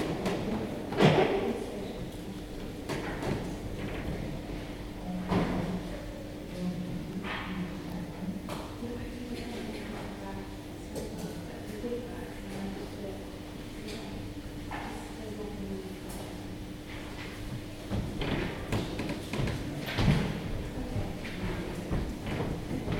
Victoria and Albert Museum, South Kensington, London, United Kingdom - National Art Library, reading room
sounds in the reading room of National Art Library, London
London, UK, 20 October